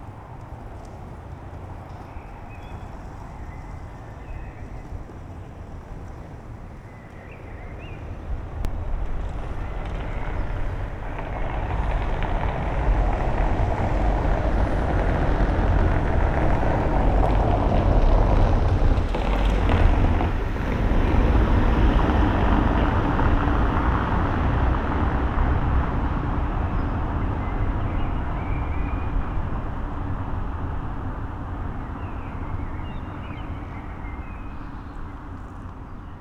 Berlin: Vermessungspunkt Friedel- / Pflügerstraße - Klangvermessung Kreuzkölln ::: 12.07.2012 ::: 04:26
Berlin, Germany, July 2012